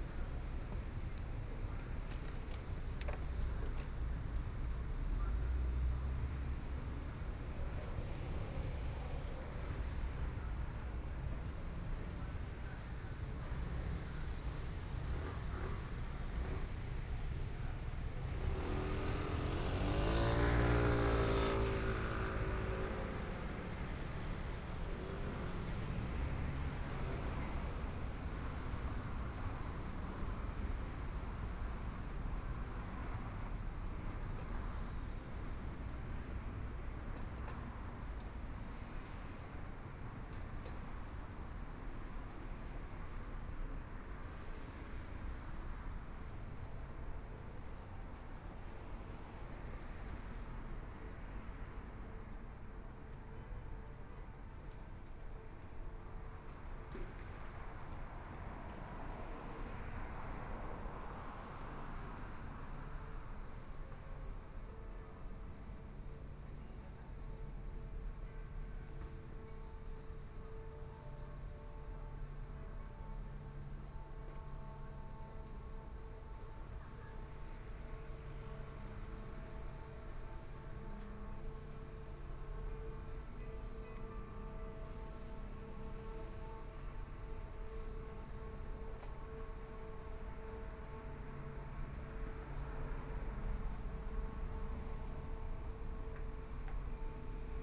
Lequn 3rd Rd., Taipei City - Environmental sounds on the street
Environmental sounds on the street, Traffic Sound
Please turn up the volume
Binaural recordings, Zoom H4n+ Soundman OKM II